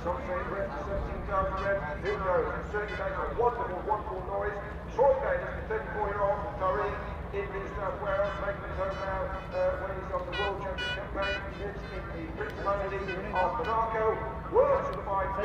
Castle Donington, UK - British Motorcycle Grand Prix 2003 ... moto grandprix ...
Race ... part one ...Starkeys ... Donington Park ... mixture off 990cc four stroke and 500cc two strokes ...
Derby, UK